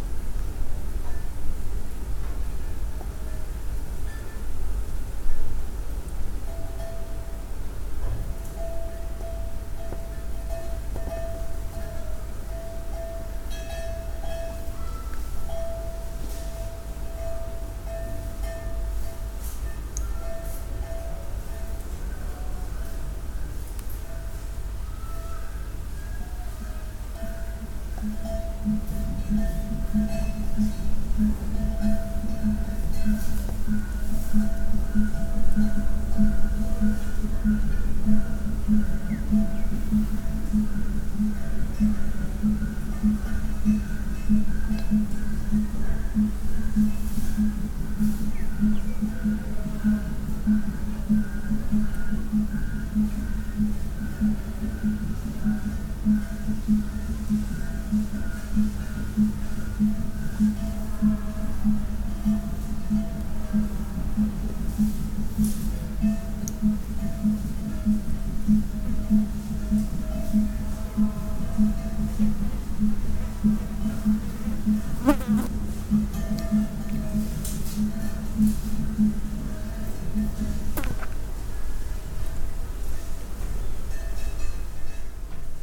Binga, Zimbabwe - All Souls Mission
…we are at Tusimpe Cathalic Mission in Binga… sounds from a herd of cows wandering through the dry bush… occasional singing and drumming from the church…